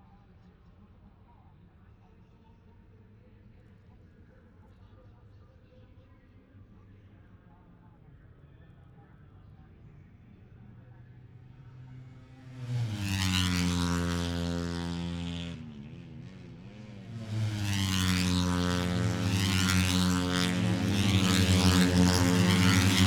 Silverstone Circuit, Towcester, UK - british motorcycle grand prix 2021 ... moto three ...
moto three qualifying two ... wellington straight ... dpa 4060s to Zoom H5 ...
England, United Kingdom